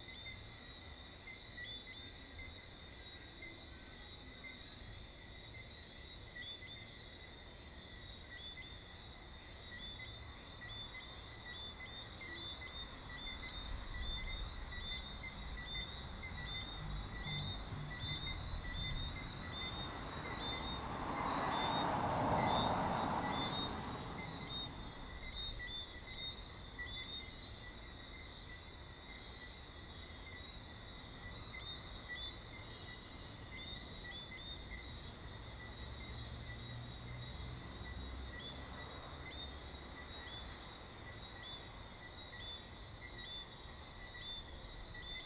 Queenstown, Georgetown, Guyana - Saturday Night at Herdmanston Lodge
The sounds of Saturday night at Herdmanston Lodge on a quiet(ish) street in Georgetown, Guyana. You can hear an ensemble of crickets mixing with bassy sound systems, distant car horns, and the general buzz of distant activity.
Demerara-Mahaica Region, Guyana, 18 May 2013